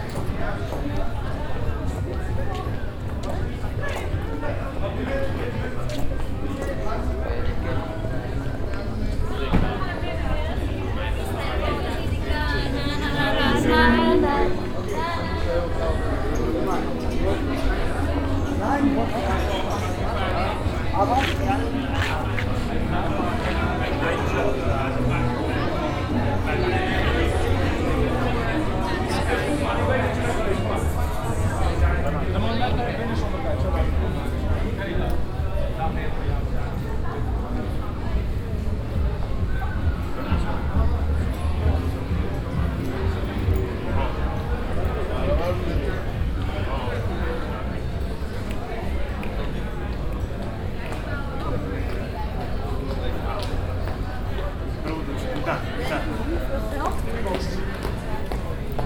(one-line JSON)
{"title": "amsterdam, leidsekruisstraat, night scene", "date": "2010-07-07 09:52:00", "description": "on a saturday night in the dense crowded bar and restaurant area of the town\ninternational city scapes- social ambiences and topographic field recordings", "latitude": "52.36", "longitude": "4.88", "altitude": "-1", "timezone": "Europe/Amsterdam"}